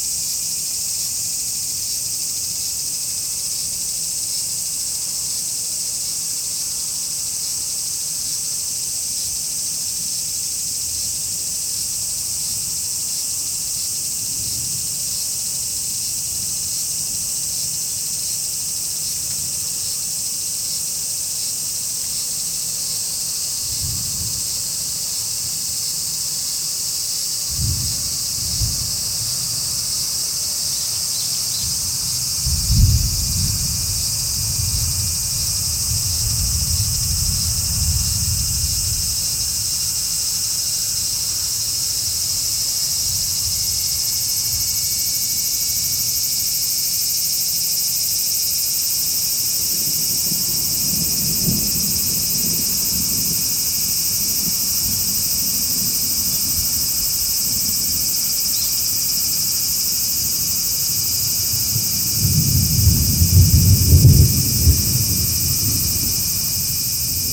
Cicadas, thunder, and a few birds on a dark Sunday afternoon in Takano Playground. It was raining very lightly, and no children playing.